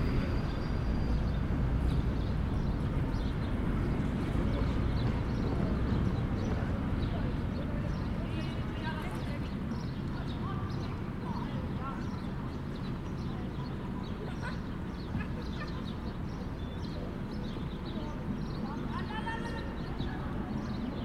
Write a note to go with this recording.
*Listen with headphones for best acoustic results. A busy atmosphere with regular traffic of all kinds and bird life. New textures are formed as wheels ride on cobble stones on the main transit road. The space colors low frequencies and can be reverberant with time. Major city arrivals and transits take place here. Stereo field is vivid and easily distinguishable. Recording and monitoring gear: Zoom F4 Field Recorder, LOM MikroUsi Pro, Beyerdynamic DT 770 PRO/ DT 1990 PRO.